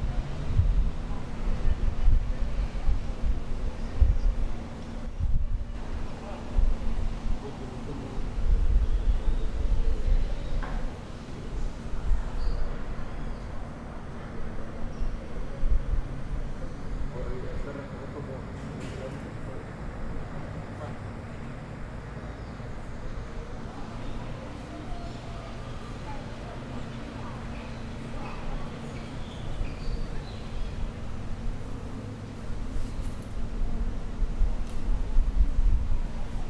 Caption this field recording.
Descripción, Sonido tónico: Balcón bloque 12, Señal sonora: Grabado por Santiago Londoño Y Felipe San Martín